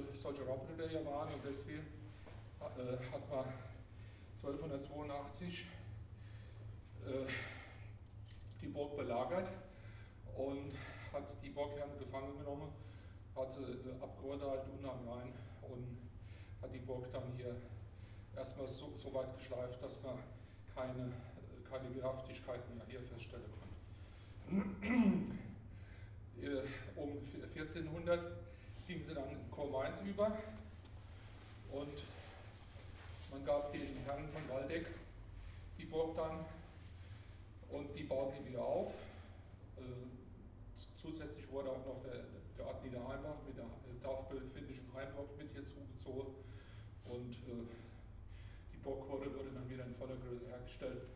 niederheimbach: burg sooneck - sooneck castle tour 1
guided tour through sooneck castle(1), atrium, guide (out of breath) begins his explanations of the castle's history
the city, the country & me: october 17, 2010